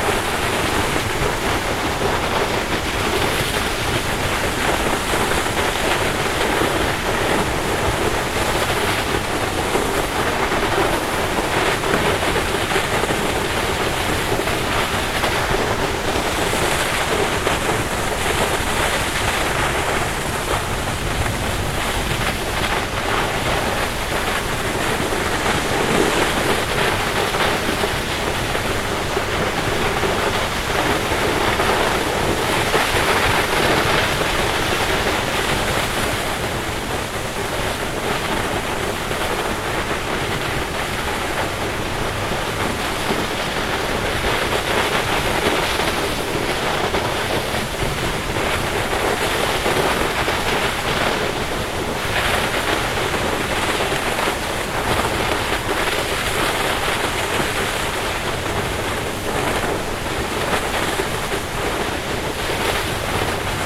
Cascade Cerveyrieu, Rue de la Cascade, Valromey-sur-Séran, France - fracas de l'eau.
Le fracas de l'eau de la cascade de Cerveyrieu sur les rochers en contrebas .
France métropolitaine, France